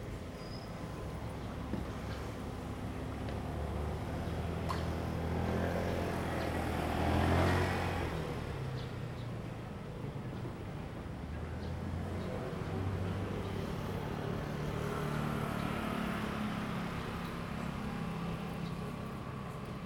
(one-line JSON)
{"title": "Zhongzheng Rd., Fenglin Township - Birdsong sound", "date": "2014-08-28 13:16:00", "description": "Birdsong sound, Sitting beside the road, Quiet little town, Traffic Sound, Very hot weather\nZoom H2n MS+XY", "latitude": "23.75", "longitude": "121.45", "altitude": "110", "timezone": "Asia/Taipei"}